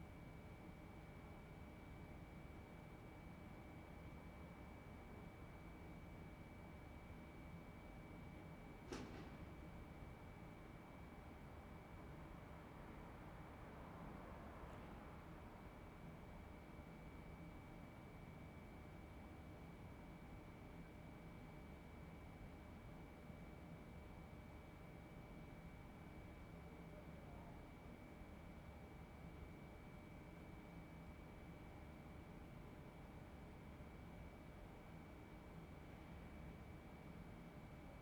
"Night on west terrace April 1st" Soundscape
Chapter XXX of Ascolto il tuo cuore, città, I listen to your heart, city
Wednesday April 1stth 2020. Fixed position on an internal terrace at San Salvario district Turin, three weeks after emergency disposition due to the epidemic of COVID19. Different position as previous recording.
Start at 10:52 p.m. end at 11:39 p.m. duration of recording 47'02''.
Torino, Piemonte, Italia, April 2020